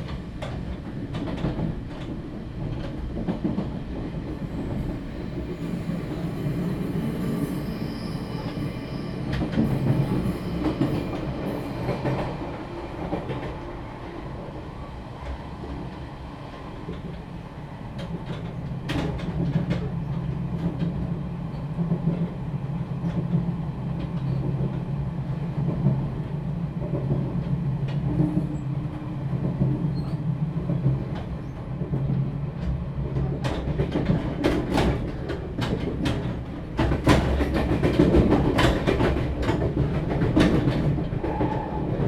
新北市汐止區, Taiwan - Inside the train compartment
Inside the train compartment, Train compartment connecting channel, Zoom H2n MS+XY